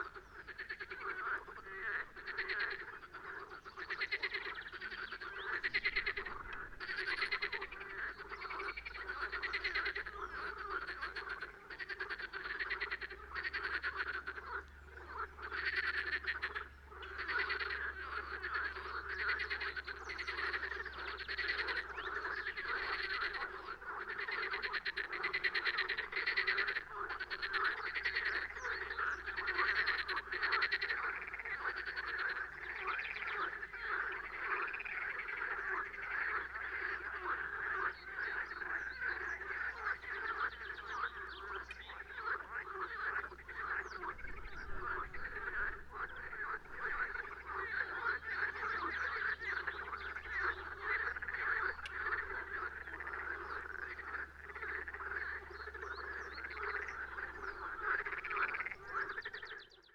Groß Neuendorf, Oder - auf dem Deich / on the dike
water rising, meadows will soon be flooded, many frogs, people picknicking nearby
23 May 2010, 12:55